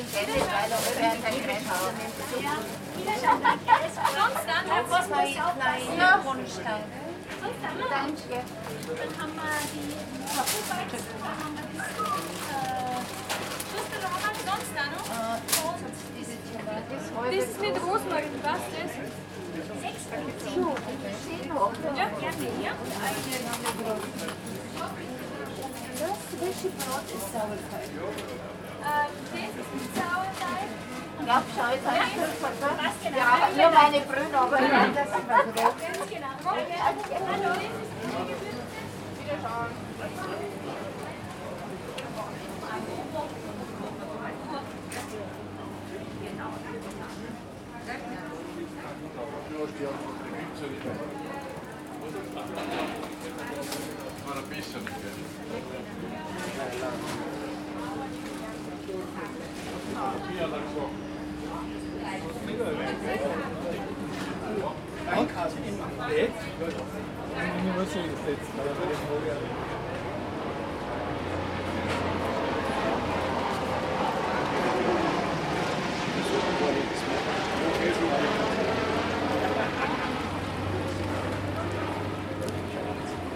Haydnstraße, Salzburg, Österreich - Schranne Salzburg 2
Wochenmarkt in Salzburg, jeden Donnerstag. Weekly market in Salzburg, every Thursday